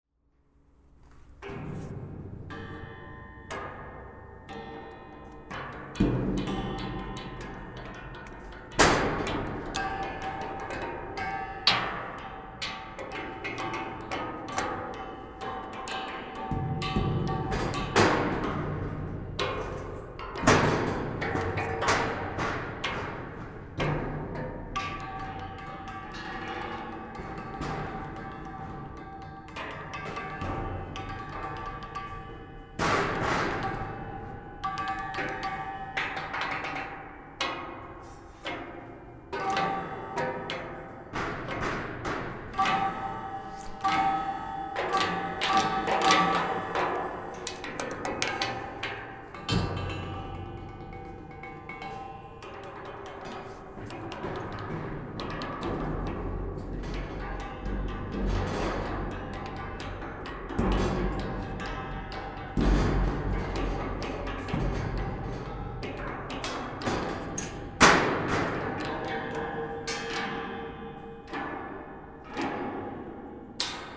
Saint-Aubin-lès-Elbeuf, France - Footbridge
We are both playing with a metallic footbridge.
19 September 2016